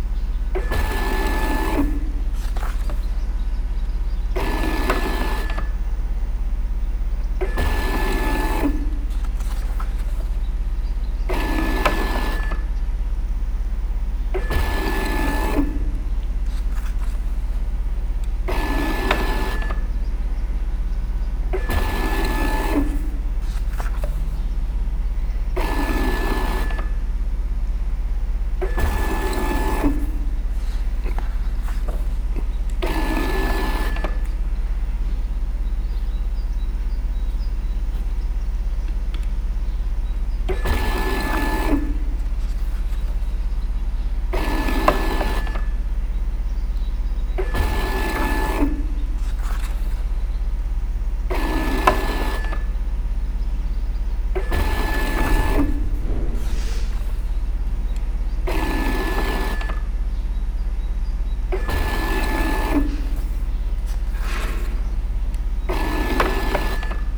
Cabrera d'Anoia, Barcelona, Spain - 2014-04-17 Calafou: Bookscanner
A DIY Bookscanner in operation at the Calafou Hacklab. The scanner was designed by Voja Antonic for the Hack The Biblio project.
Soundman OKM II Classic Studio -> Olympus LS-11
17 April 2014